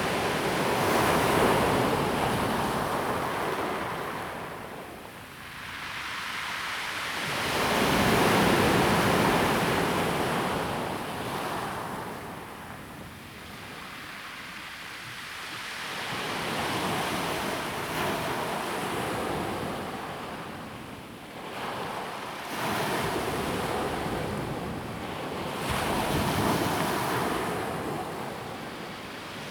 sound of the waves
Zoom H2n MS+XY +Sptial Audio